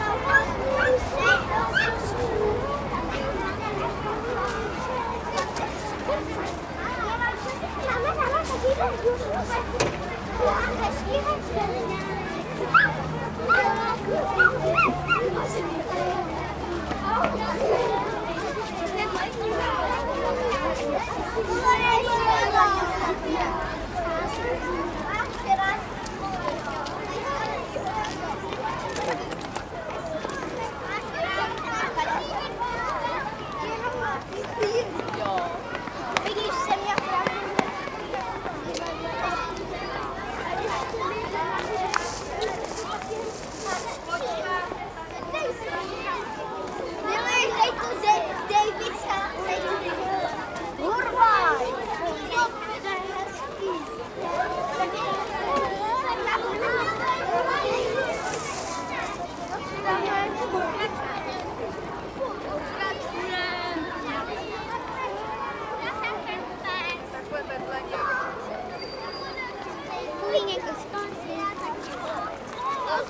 Prague, schoolkids crossing the street
About 160 young children on a school excursion crossing the street with the help of their teachers. Queueing up, they block the crossroads, monumenting for the rights of all future pedestrians.